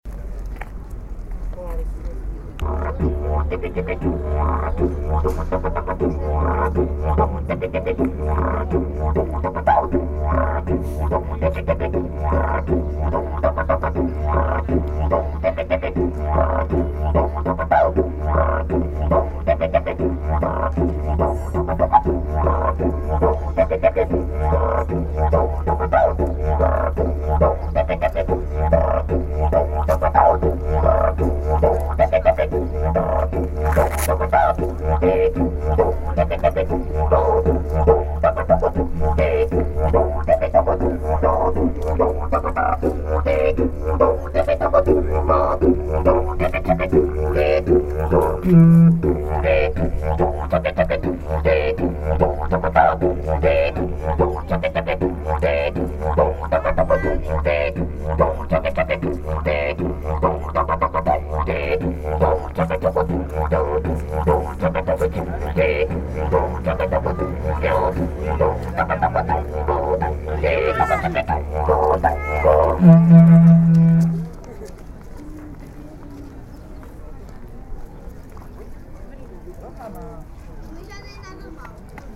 Nazarè, didjeridoo over the ocean
a young woman plays didjeridoo, looking at the ocean